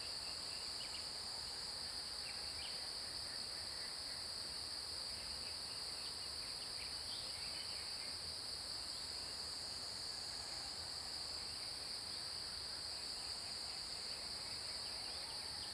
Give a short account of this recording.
Crowing sounds, Bird calls, Cicada sounds, Early morning, Zoom H2n MS+XY